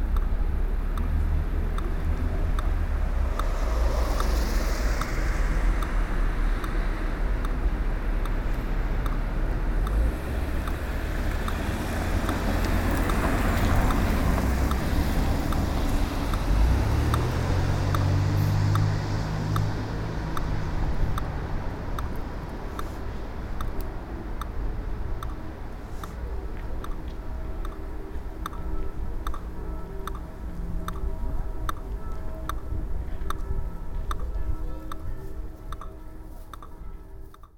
berlin, street crossing, grossbeerenstr - obentrautstr
Berlin, Germany